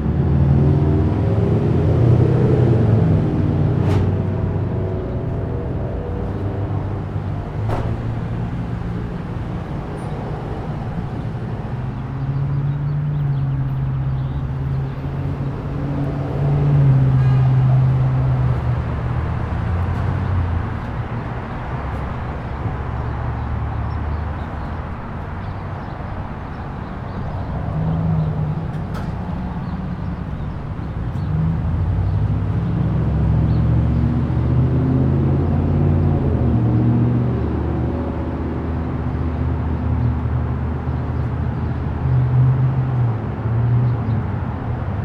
{"title": "neoscenes: Shuttle-U parking lot", "date": "2009-02-28 05:37:00", "latitude": "34.55", "longitude": "-112.47", "altitude": "2000", "timezone": "Europe/Berlin"}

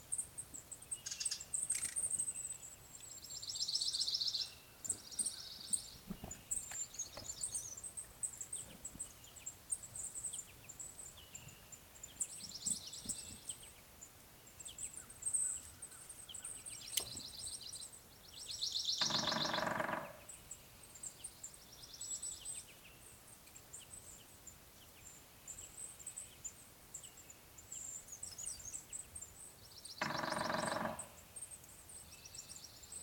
{"title": "Ouachita National Forest - Wildcat Mountain area, Arkansas, USA - 5am soundscape - pond in valley south of Wildcat Mountain (Ouachita National Forest)", "date": "2020-03-08 05:00:00", "description": "Excerpt from overnight recording. Microphones attached to a tree facing a wildlife pond in a remote forested area. The pond is surrounded by pine trees and the constant sound of wind in the trees. The night was also cold and relatively quiet and the recording setup was not ideal for a quiet soundscape. Never-the-less, this excerpt captures the pre-dawn soundscape at about 5 am, with pileated woodpecker calls and woodpecker drumming, crows and other birds not yet identified.", "latitude": "34.84", "longitude": "-92.81", "altitude": "274", "timezone": "America/Chicago"}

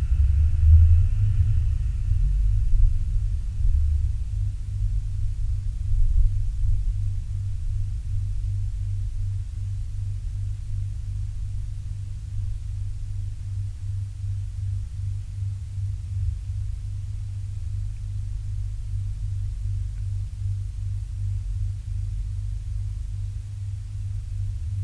{"title": "Sound of the Ground, Mainaschaff, Deutschland - Sound of the Ground in my Cellar", "date": "2017-05-22 22:38:00", "description": "Sound of the Ground in my Cellar recorded with Aquarian Audio H2a-XLR as a contact-mic into ZOOM H5. Low frequencies of freezers in the neighbourhood and cars on the road. From 00:30 to 01:00 the arrival an departure of a bus is noticeable.", "latitude": "49.98", "longitude": "9.09", "timezone": "Europe/Berlin"}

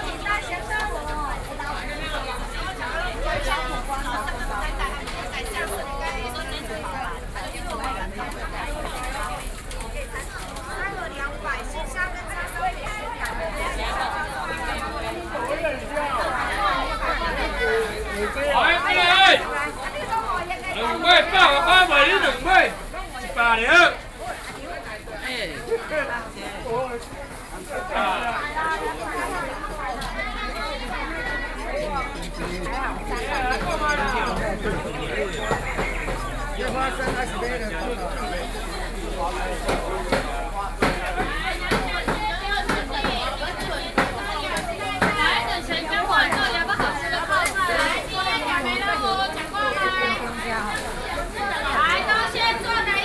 {"title": "Jingmei St., Wenshan Dist., Taipei City - SoundMap20121128-6", "date": "2012-11-28 10:03:00", "latitude": "24.99", "longitude": "121.54", "altitude": "20", "timezone": "Asia/Taipei"}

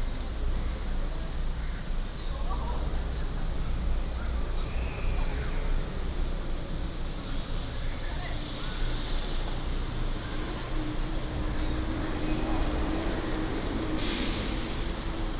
{"title": "Seosang-dong, Gimhae-si - Walking in the Street", "date": "2014-12-15 20:14:00", "description": "Walking in the Street, Traffic Sound, Crying children", "latitude": "35.23", "longitude": "128.88", "altitude": "11", "timezone": "Asia/Seoul"}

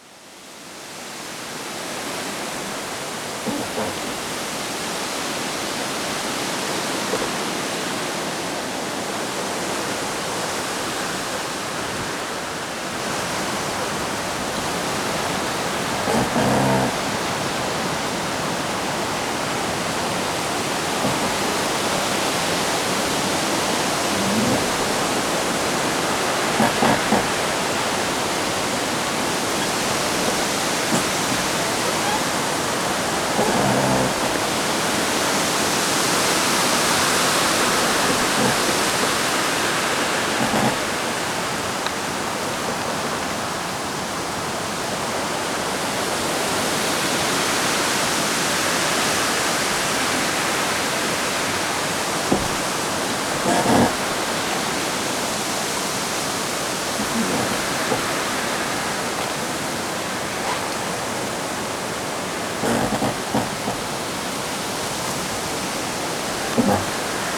{"title": "Plumpton Woods", "date": "2009-09-25 17:27:00", "description": "A very windy autumn day. Two tree trunks rubbing and creaking together as the canopy above catches the wind.", "latitude": "54.21", "longitude": "-3.05", "altitude": "51", "timezone": "Europe/London"}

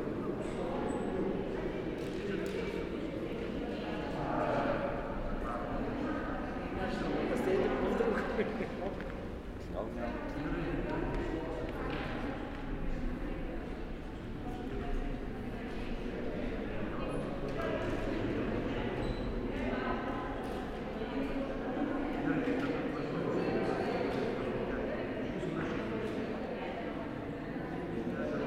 {"title": "Utena, Lithuania, vaccination centre", "date": "2021-05-05 09:05:00", "description": "just got Pfizer vaccine. and sitting required 10 minutes after the injection, I push \"rec\" on my recorder. ambience of local vaccination centre. large sport hall.", "latitude": "55.50", "longitude": "25.60", "altitude": "111", "timezone": "Europe/Vilnius"}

